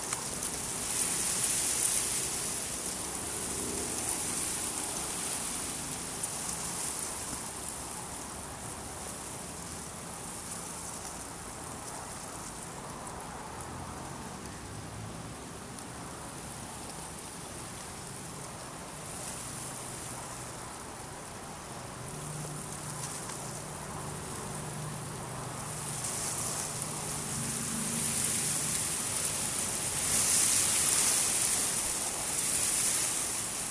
Walhain, Belgique - Wind in barley
A small wind in barley field.
7 August 2016, 14:30